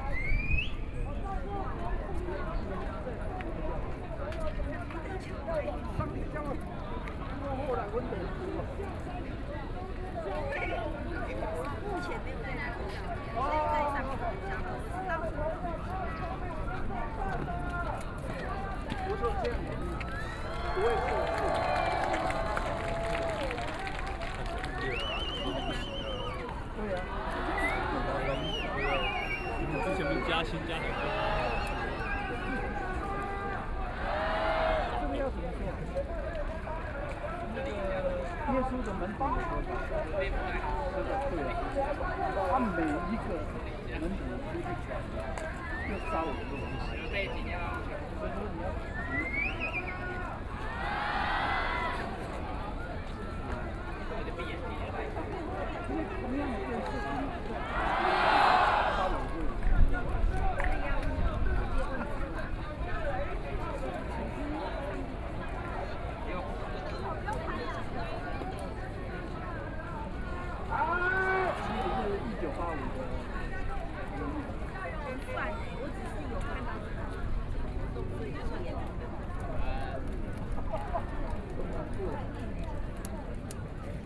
National Chiang Kai-shek Memorial Hall - 1985 event
Thousand Protesters gather making event, Everyone singing protest songs, Selling ice cream diner, Shouting slogans, Binaural recordings, Sony Pcm d50+ Soundman OKM II